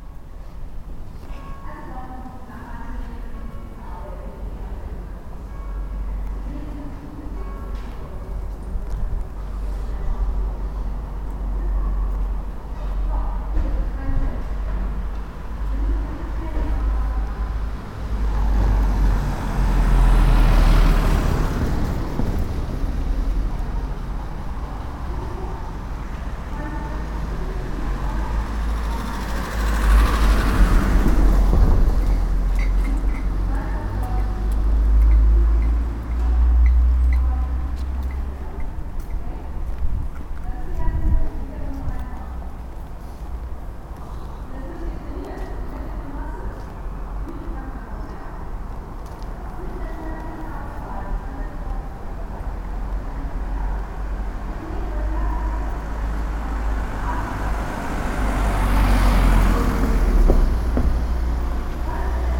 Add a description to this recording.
on the street in the early evening, the sound of an amplified fitness trainer with motivation music plus traffic passing by, soundmap nrw - social ambiences and topographic field recordings